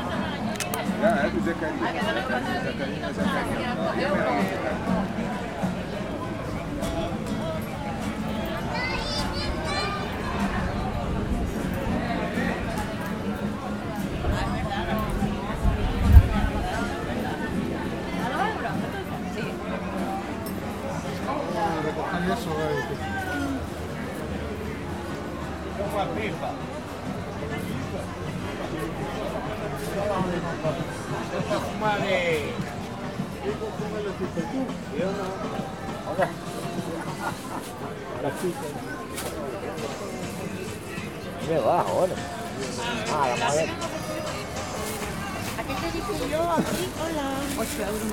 Calle Veintitrés de Mayo, Puerto del Rosario, Las Palmas, Spain - Rosario Flea Market
A brief recording of a bustling, colourful, friendly flea market in the Captial of Fuertaventura, Rosaario, Spain.